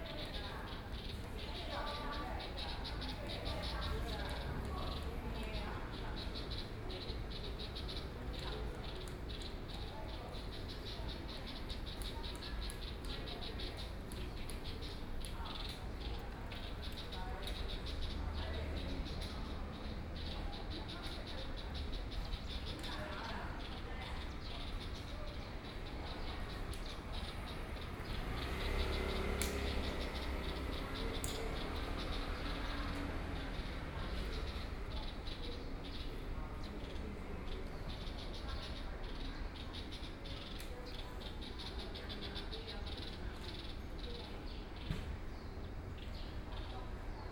XinLu Park, Taipei City - Park entrance
Birds singing, The woman's voice chat, Traffic Sound, Dogs barking
Sony PCM D50+ Soundman OKM II
Taipei City, Taiwan, 27 April 2014